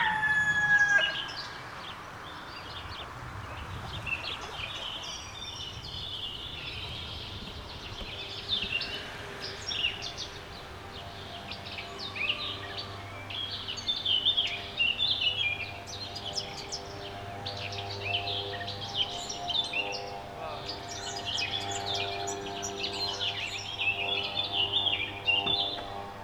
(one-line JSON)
{"title": "Wil, Switzerland - Afternoon relaxing with chickens and passing trains", "date": "2012-05-19 17:24:00", "description": "Awaiting soundcheck at Gare de Lion, Wil, Switzerland. Recorded on an Audio Technica AT815ST with a m>s setting and later reconnected with Waves S1 Imager plugin.", "latitude": "47.46", "longitude": "9.03", "altitude": "567", "timezone": "Europe/Zurich"}